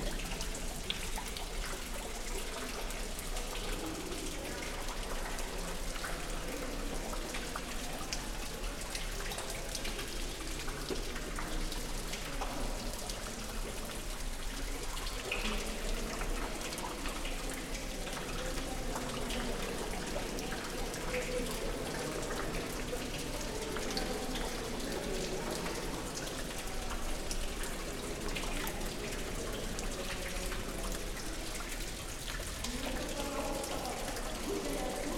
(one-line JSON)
{"title": "Estr. do Convento, Tomar, Portugal - Convento de Cristo Fountain", "date": "2017-09-20 17:32:00", "description": "Convento de Cristo in Tomar. Hall with fountain on the center, water running, people walking and talking resonating in the space. Recorded with a pairt of Primo 172 in AB stereo configuration onto a SD mixpre6.", "latitude": "39.60", "longitude": "-8.42", "altitude": "115", "timezone": "Europe/Lisbon"}